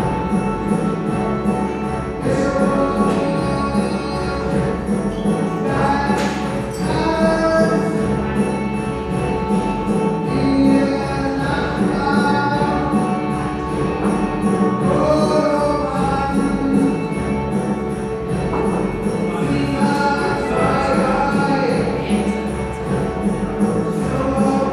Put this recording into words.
foyer/bar ambience, music of the band f.s.k. through an open door to the concert hall, the city, the country & me: december 12, 2012